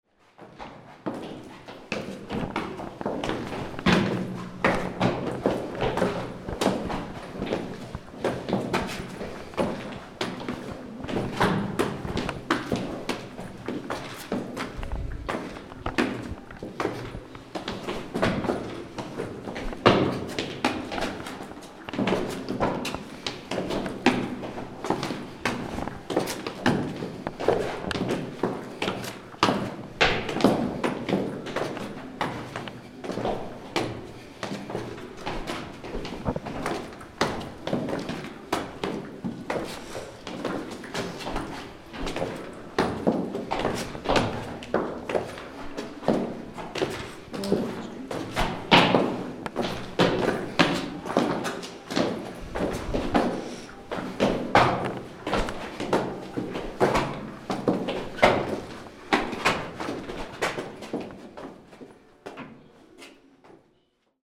Nocé, France - Manoir de Courboyer
Enregistrements de pas dans les escaliers du manoir, Zoom H6